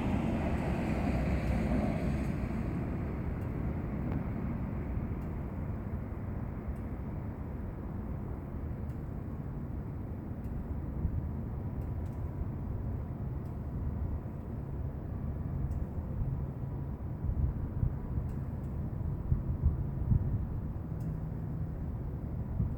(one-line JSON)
{"title": "Fourth St, Berkeley, CA, USA - Industrial District, Cars Passing", "date": "2014-08-10 11:33:00", "latitude": "37.87", "longitude": "-122.30", "altitude": "4", "timezone": "America/Los_Angeles"}